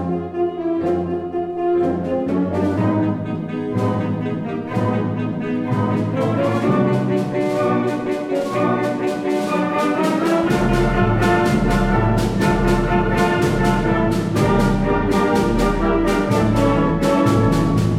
Musikwissenschaft, Karl-Schönherr-Straße, Innsbruck, Österreich - Blasmusikkapelle Mariahilf/St. Nikolaus im Canesianum Teil 4
Canesianum Blasmusikkapelle Mariahilf/St. Nikolaus, vogelweide, waltherpark, st. Nikolaus, mariahilf, innsbruck, stadtpotentiale 2017, bird lab, mapping waltherpark realities, kulturverein vogelweide
Innsbruck, Austria, 19 May 2018